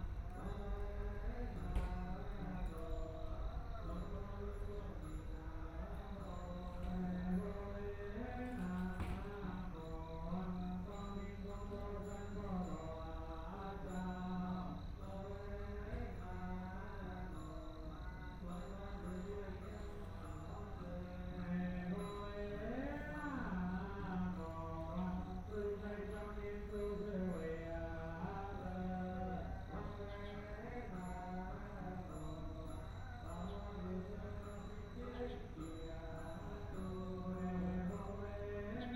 台北市中山區圓山里 - Standing next to the temple
Standing next to the temple, Temple chanting voices, Aircraft flying through, Birds singing, Binaural recordings, Zoom H4n+ Soundman OKM II